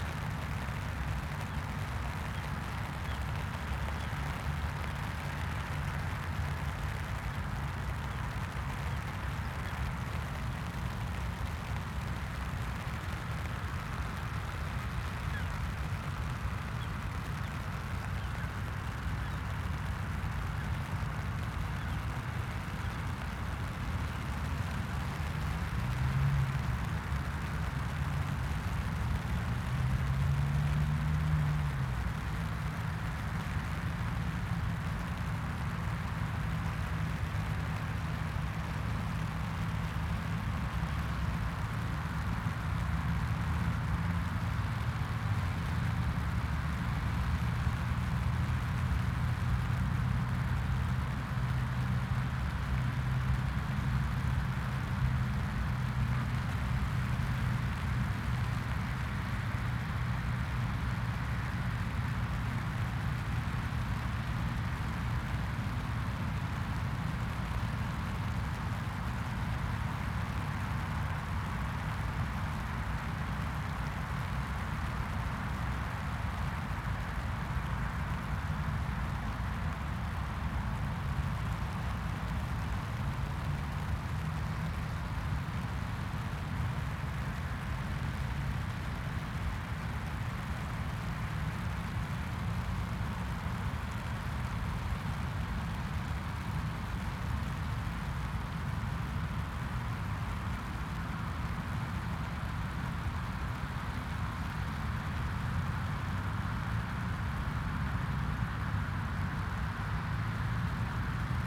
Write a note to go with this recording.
The Poplars High Street Duke’s Moor Town Moor, The stream is full, jackdaws and crows walk the sodden moor, A flock of black-headed gulls, loafs by a large puddle, they lift and drift off as I approach, A mistle thrush flies off, low, then lifts into a tree, Starlings sit, chatter, and preen, in a short break in the rain, There is enough traffic, to make a constant noise, three 10.00 busses, each empty